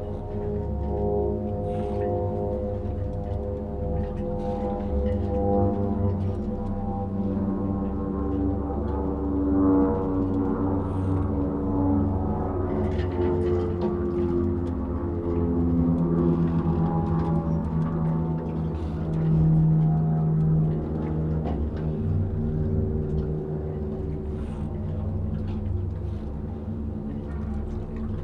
South End Ave, New York, NY, USA - Blue moon 2004
An on-site recording of the O+A installation Blue moon transforming the ambience around the small marina in real time with a resonance tube
29 April 2004, 22:24